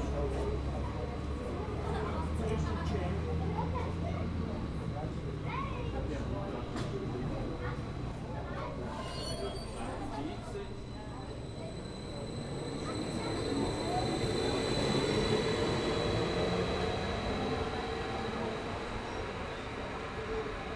Zugeinfahrt des Zuges nach Laufen Delémont